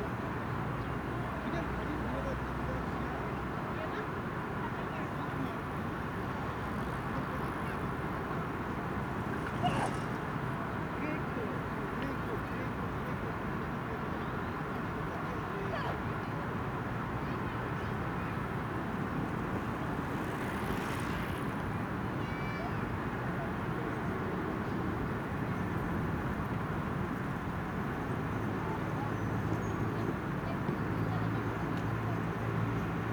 대한민국 서울특별시 서초구 잠원동 73-2 한강 - Han river, Banpo Hangang Park, People, Riding Bicycle
Han river, Banpo Hangang Park, People talking, Riding Bicycle
반포한강공원, 사람들, 자전거